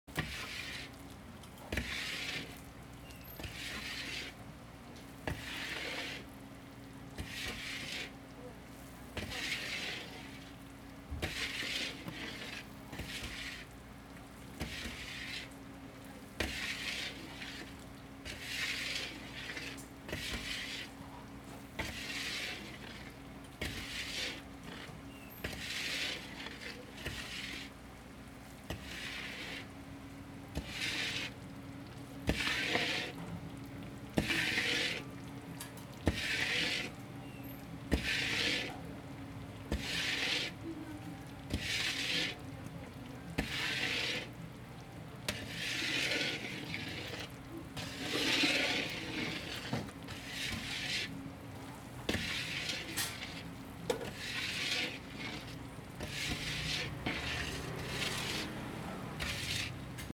Twenty cents winter melon tea 兩角銀冬瓜茶 - Stirring an cooking
Shop owner stirring and cooking the winter melon. 老闆攪拌熬煮冬瓜